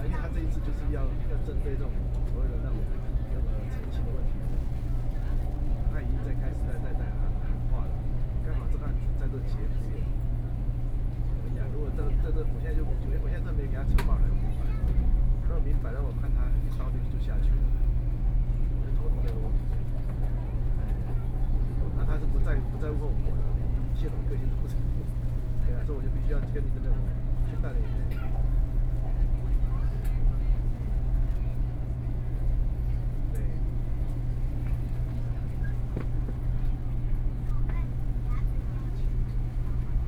Taiwan High Speed Rail - Train speed up
Train speed up, Sony PCM D50 + Soundman OKM II
Yuanchang Township, 雲172鄉道, 26 July 2013, ~20:00